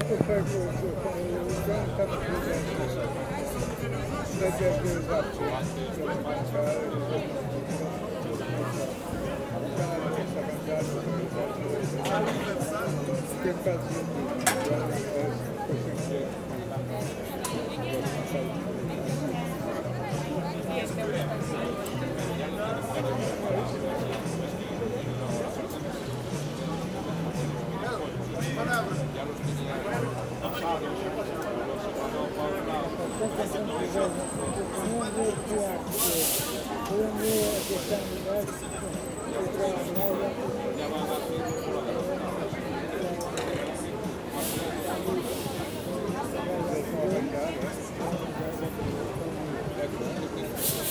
Quiosque do Refresco, Praça Luís de Camões - A Midday Town Square Kiosk
Having a drink in Bairro Alto, Lisbon.
Recorded with Zoom H6.